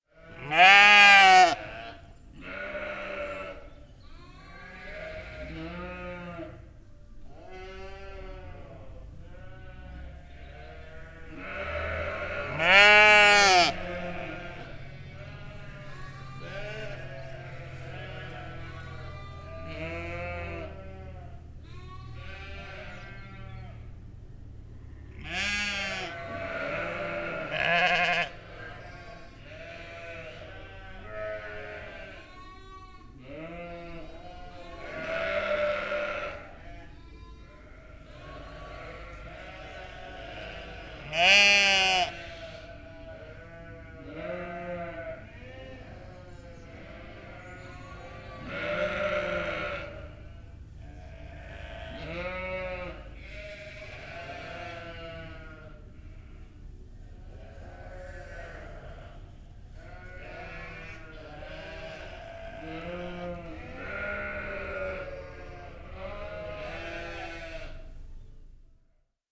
This is the sound of the lambing barn in which the ewes and their lambs are currently being kept. It is full of recently born lambs and ewes, and pregnant ewes who are about to have their lambs.